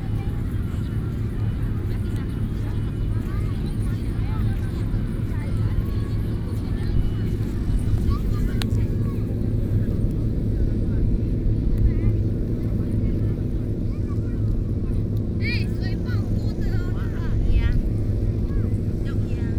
Bali District, New Taipei City - Yacht travel